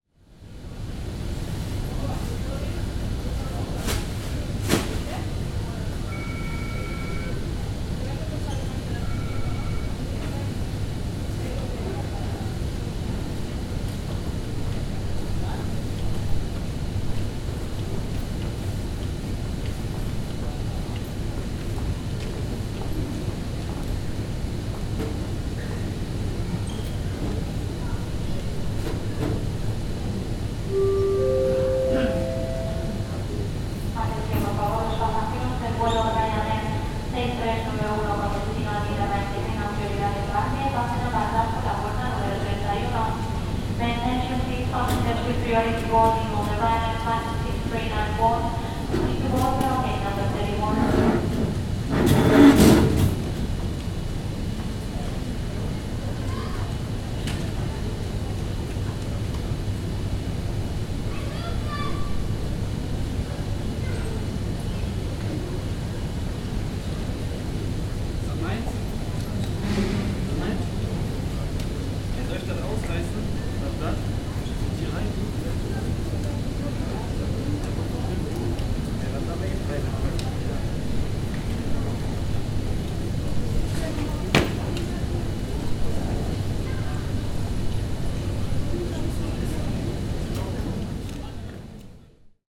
Aeroport, Barcelona, Spain - (-198) Airport walks
Recording of an airport ambiance.
Recorded with Zoom H4